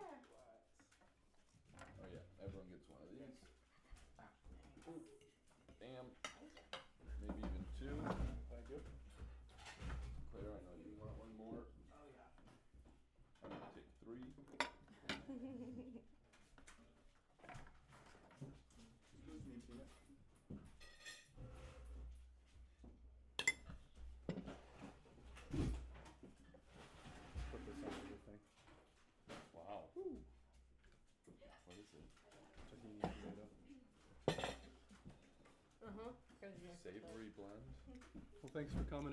el bruncho
brunch at the ranch oakland california urban garden local food organic goodness friends love happy times !!!
Alameda County, California, United States of America